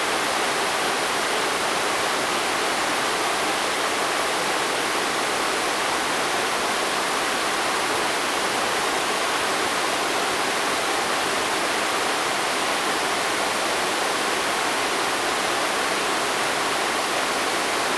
得子口溪, 大忠村, Jiaoxi Township - stream
Waterfalls and stream
Zoom H2n MS+ XY